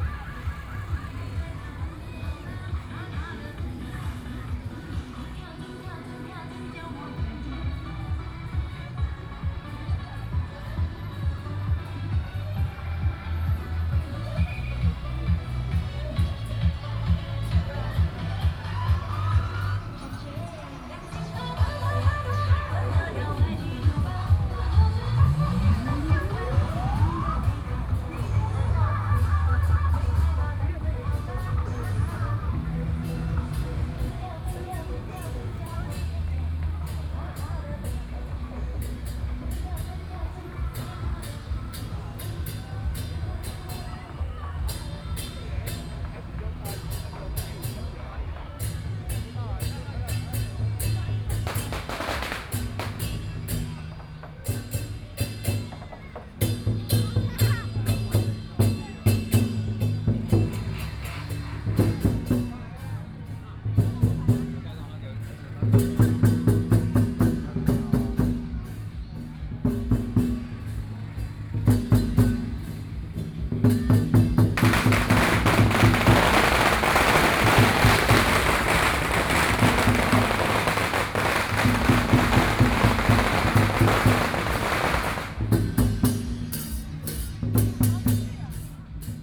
Traditional Festivals, The sound of firecrackers, Traffic Sound
Please turn up the volume a little. Binaural recordings, Sony PCM D100+ Soundman OKM II